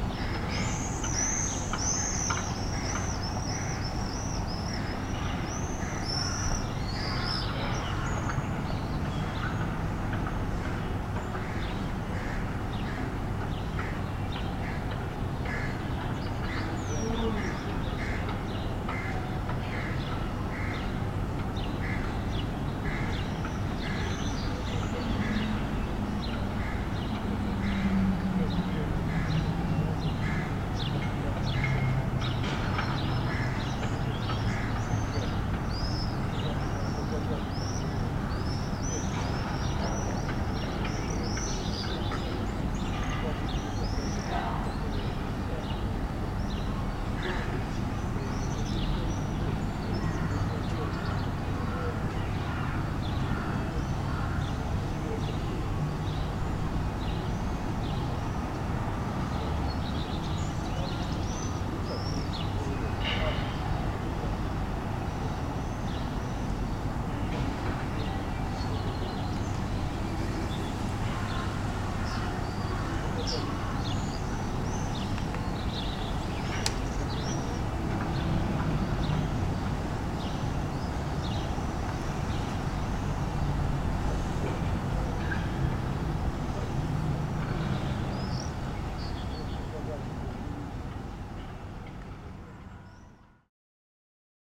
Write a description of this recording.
morning. listening through hotel window